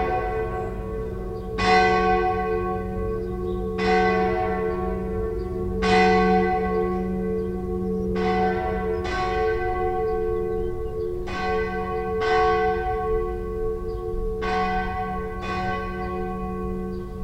Venice, Italy - campane-glocken-bells
mittagsglocken am dorsoduro/ campane di mezziogiorna a dorsoduro / bells at noon